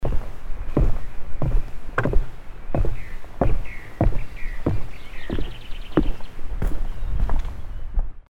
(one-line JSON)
{"title": "hoscheid, wooden staircase", "date": "2011-06-05 19:34:00", "description": "Steps down a wooden staircase in the wood on the Hoscheid Klangwanderweg - sentier sonore.\nHoscheid, hölzerne Treppe\nSchritte hinunter auf einer hölzernen Treppe im Wald beim Klangwanderweg von Hoscheid.\nHoscheid, escalier en bois\nDes bruits de pas sur un escalier de bois en forêt, sur le Sentier Sonore de Hoscheid.\nProjekt - Klangraum Our - topographic field recordings, sound objects and social ambiences", "latitude": "49.94", "longitude": "6.07", "altitude": "319", "timezone": "Europe/Luxembourg"}